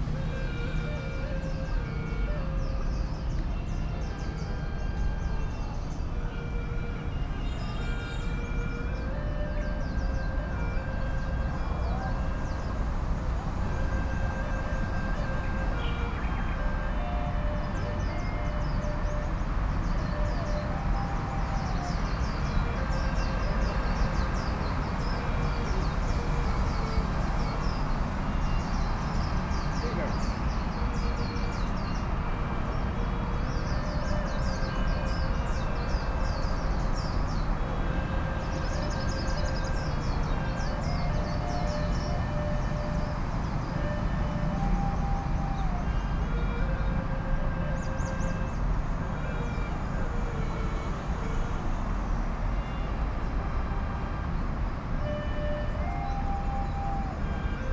Tianya, Sanya, Hainan, China - Traditional flutes in an urban mangrove pavilion
On a pavilion walkway outside a fragment of mangrove forest in Sanya city, an older couple play a traditional wind instrument to notation taped to a wall. Birds sing in the mangroves, and the occasional fish jumps in the river, while traffic rumbles and beeps past according to the traffic lights.
Recorded on Sony PCM-M10 with built-in microphones.
Hainan Sheng, China, 8 April 2017, 18:29